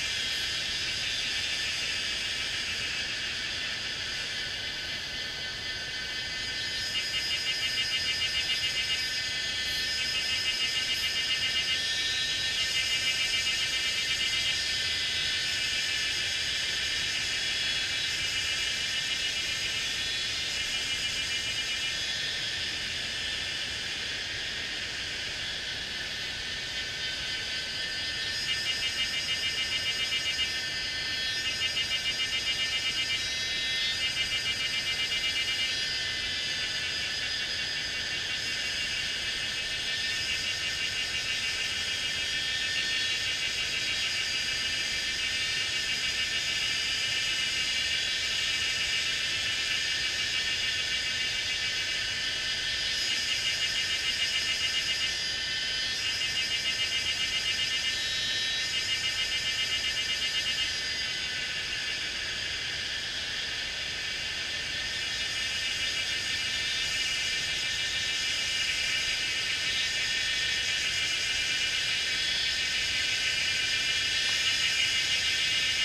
水牆, 桃米里 Puli Township - in the woods
in the woods, Cicada sounds, Far from the river sound
Zoom H2n MS+XY